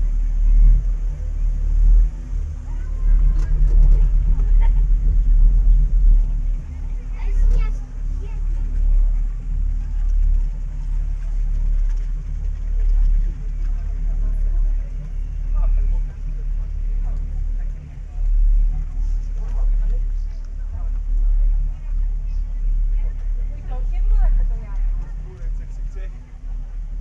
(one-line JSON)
{"title": "Ski Lift, Krynica-Zdrój, Poland - (657 BI) Ski lift ride", "date": "2020-07-26 14:10:00", "description": "Binaural recording of ski lift ride from point of the queue, through gates to a part of ride itself.\nRecorded with Sound Devices Mix-Pre6 II and DPA 4560 microphones.", "latitude": "49.43", "longitude": "20.93", "altitude": "789", "timezone": "Europe/Warsaw"}